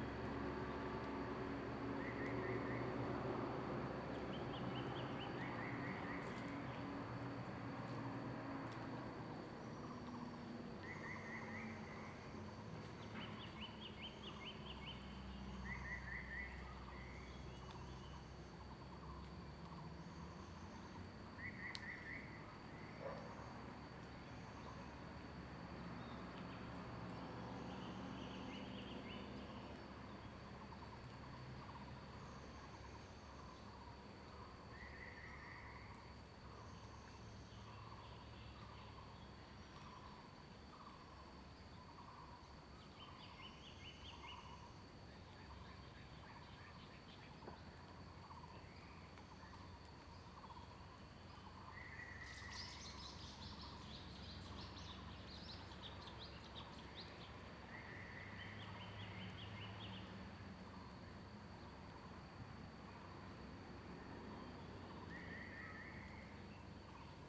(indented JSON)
{
  "title": "545台灣南投縣埔里鎮桃米里 - In the woods",
  "date": "2016-04-19 06:31:00",
  "description": "In the woods, Bird sounds, Traffic Sound\nZoom H2n MS+XY",
  "latitude": "23.94",
  "longitude": "120.92",
  "altitude": "518",
  "timezone": "Asia/Taipei"
}